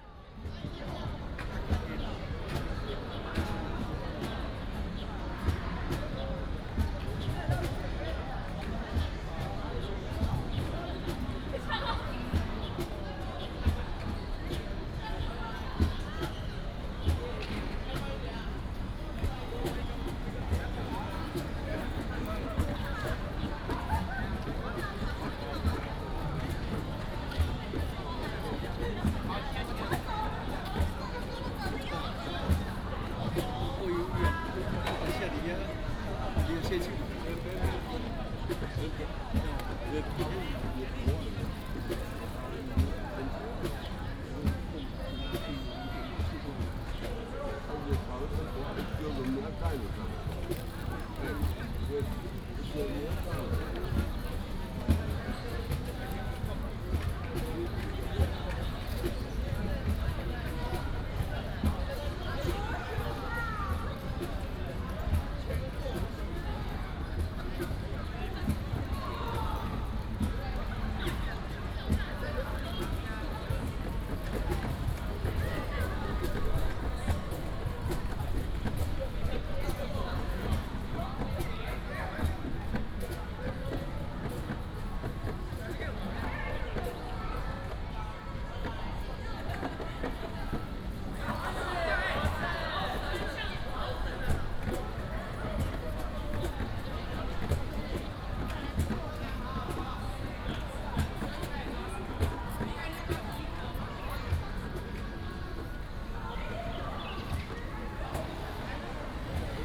中正公園, Zhongli District - in the Park
Many high school students, High school student music association, birds
Taoyuan City, Taiwan, 7 February 2017, ~17:00